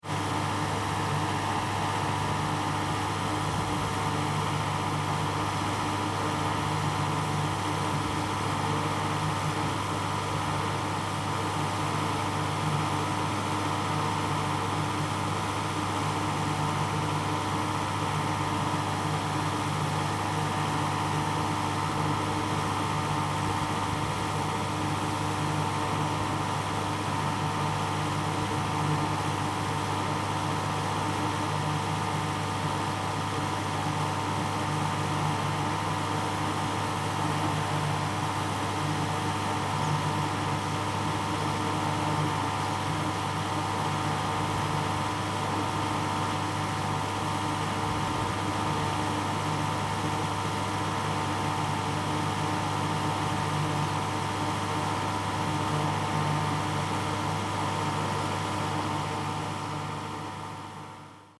stable, air condition
stafsäter recordings.
recorded july, 2008.